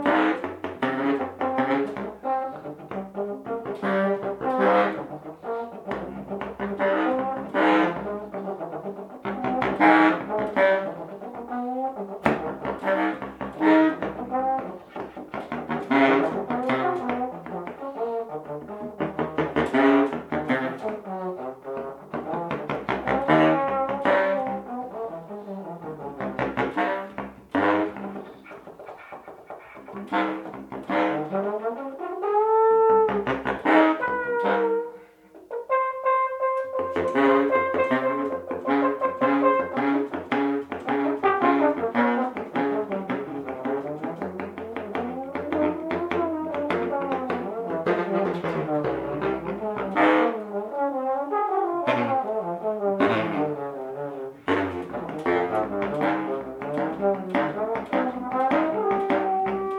private concert, nov 23, 2007 - Köln, private concert, nov 23, 2007
excerpt from a private concert. playing: dirk raulf, sax - thomas heberer, tp - matthias muche, trb
Cologne, Germany, 29 May 2008